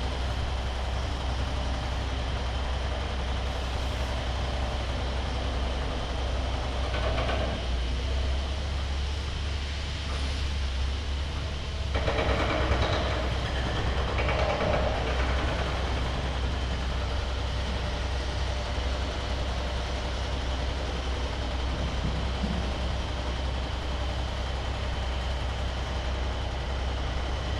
EC-1 pneumtic hammers 4
EC-1/Lodz